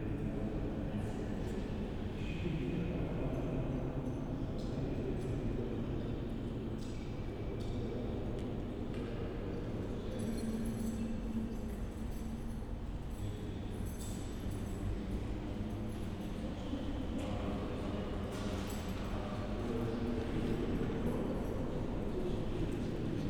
near one of the main entrance gates.
(geek note: SD702 audio technica BP4025)
Berlin, Germany, March 2012